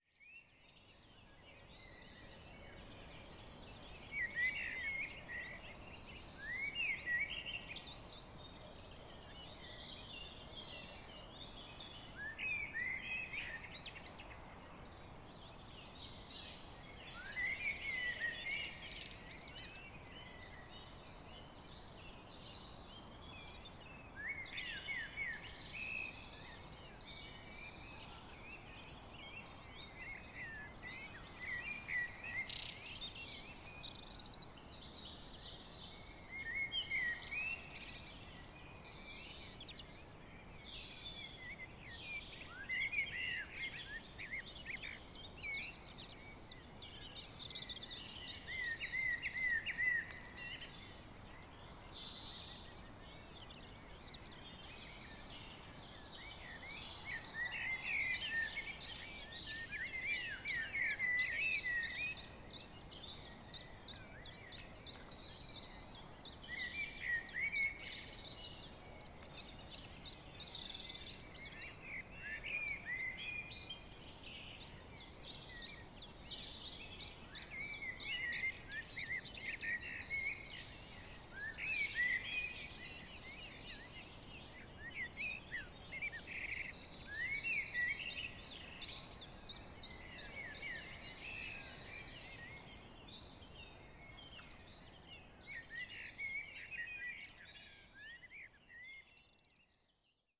Vallée des Traouiero, Trégastel, France - Blackbirds, Evenings birds in the valley [Valley Traouïero]
Début de soirée. Le chant des oiseaux résonnent dans la clairière de la vallée. Merles.
Early evening. The birds singing resound in the clearing of the valley. Blackbirds.
April 2019.
Bretagne, France métropolitaine, France, 22 April 2019